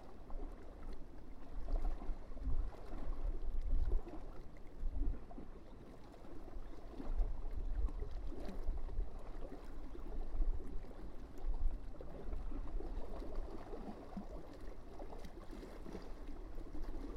lake Koprinka, Bulgaria - Lake Koprinka 01'15
The sound of water in the peacefull lake Koprinka. Recorded with Zoom H1
27 August 2016